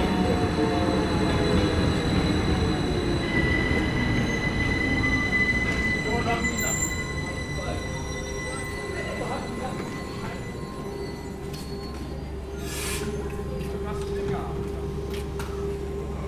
Poschiavo Bahnhof - Arrivederci
Rhätische Bahn, Weltkulturerbe, Poschiavo, Puschlav, Südbünden, Die Verabschiedung ist ciao ciao